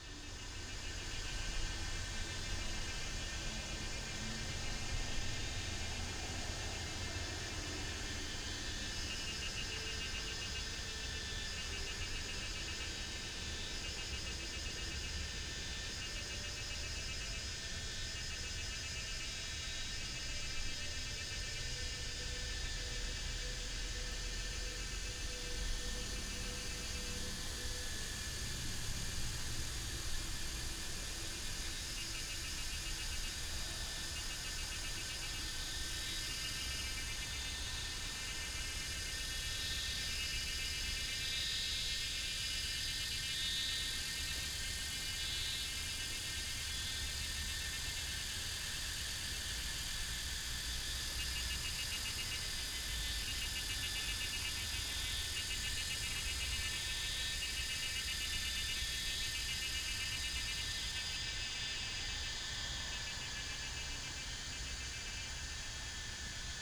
桃米紙教堂, TaoMi Li. - A small village in the evening
Cicadas cry, Traffic Sound, Very hot weather, A small village in the evening